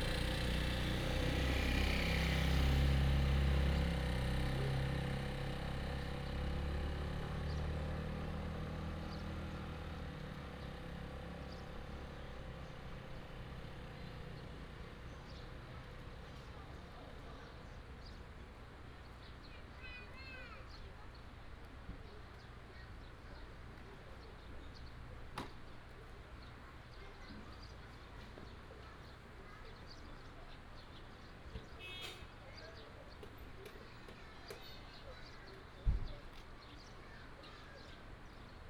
In the streets of the tribe, Traffic sound, Dog barking, Bird cry
Jinfeng Township, 東64鄉道, 3 April 2018, ~16:00